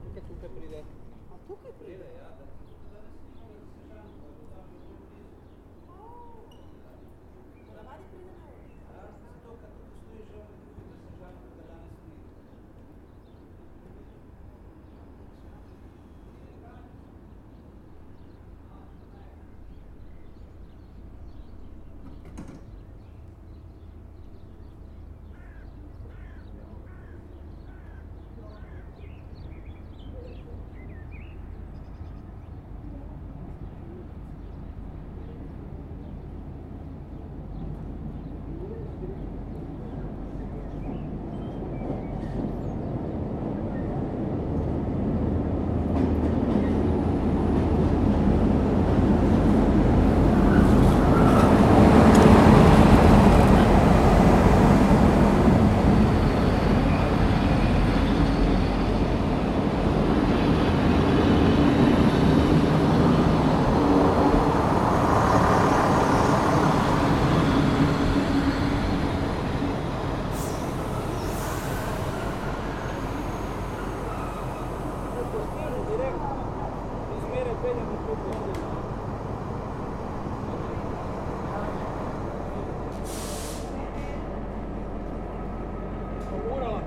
Železniška postaja, Nova Gorica, Slovenija - Prihod vlaka
Train arriving but non-abiding.
Recorded with Zoom H5 + AKG C568 B